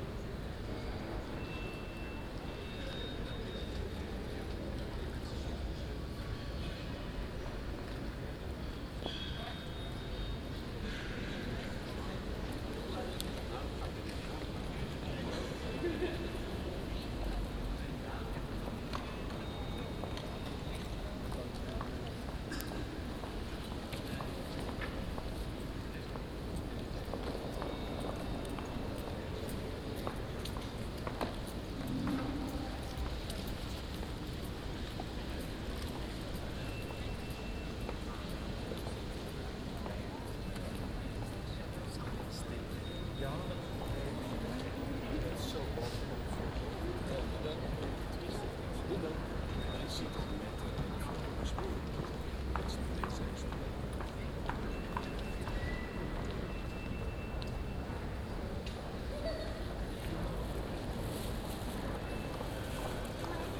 Atrium City Hall in The Hague. People waiting in que. Employees leaving the building.
Recorded with a Zoom H2 with additional Sound Professionals SP-TFB-2 binaural microphones.
Den Haag, Netherlands, March 2015